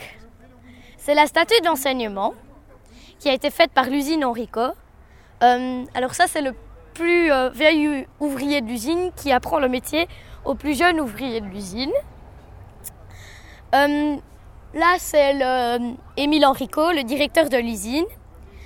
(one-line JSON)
{"title": "Court-St.-Étienne, Belgique - The monument", "date": "2015-05-24 16:50:00", "description": "On this place, there's a monument. A eight years child is explaining what is this monument. It's quite difficult for her.", "latitude": "50.65", "longitude": "4.57", "altitude": "61", "timezone": "Europe/Brussels"}